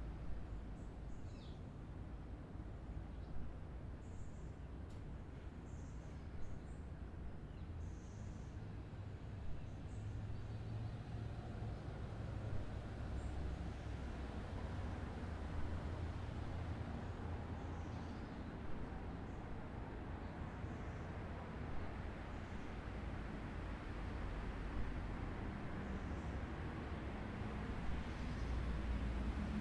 Amstelveen morning
early morning iun suburbian Amastelveen bird in the Handkerchief tree
Amstelveen, The Netherlands